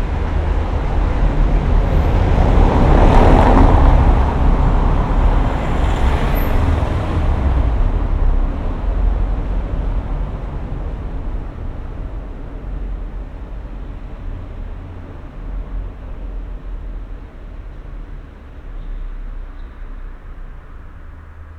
berlin: friedelstraße - the city, the country & me: night traffic
same procedure as every day
the city, the country & me: june 15, 2012